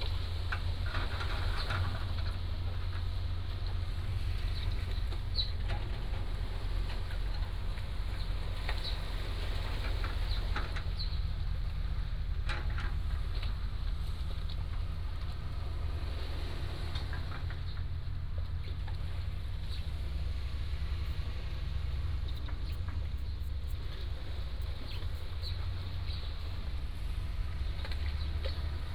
尖山村, Huxi Township - On the coast
On the coast, Sound of the waves, Traffic Sound, Birds singing, Excavators, Aircraft flying through
Penghu County, Taiwan, 21 October 2014, 09:47